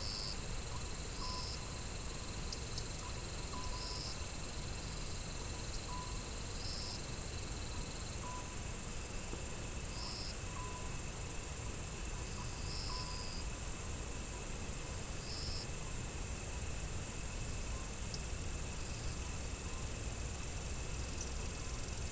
느랏재 계곡 7월 Neuratjae valley at midnight July2020
느랏재 계곡 7월_Neuratjae valley at midnight_July2020
강원도, 대한민국, 27 July